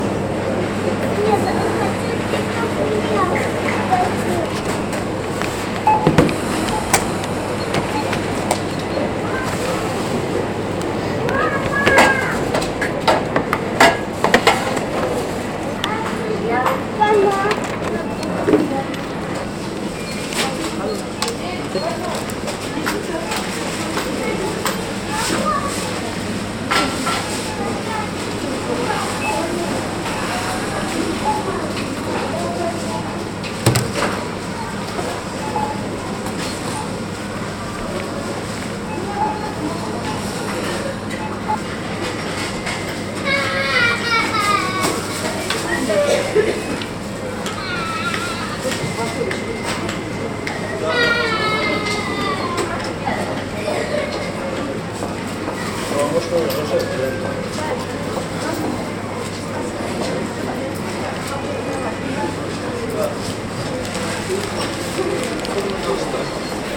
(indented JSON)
{
  "title": "shop, crying, Lasnamae, Tallinn",
  "date": "2011-04-18 08:17:00",
  "description": "shop, cash register, crying, Lasnamae",
  "latitude": "59.44",
  "longitude": "24.87",
  "altitude": "45",
  "timezone": "Europe/Tallinn"
}